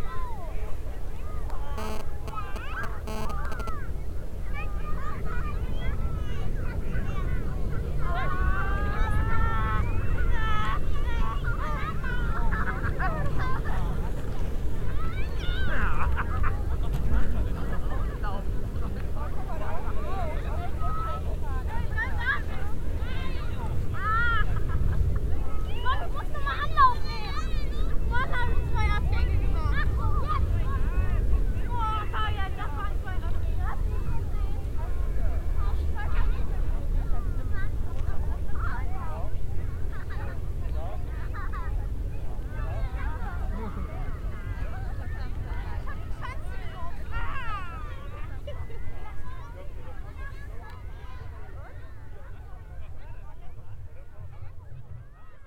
Düsseldorf, am Schürberg, Schlittenbahn - düsseldorf, am schürberg, schlittenbahn
Auf der schlittenbahn, vorbeifahrende Rodler und den Hang wieder hinauf ziehende Kinder und Erwachsene
soundmap nrw - topographic field recordings, listen to the people
12 January 2009, 16:35